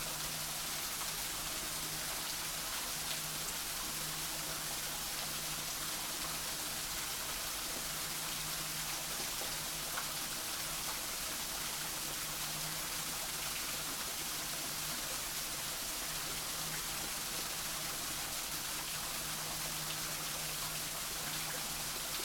Cleveland Botanical Garden, East Blvd, Cleveland, OH, USA - Cleveland Botanical Garden Rainforest
This is the sound of the cascade inside the Costa Rica Glasshouse rainforest at the Cleveland Botanical Garden. Recorded on the Sony PCM-D50.
Ohio, United States, 31 January 2022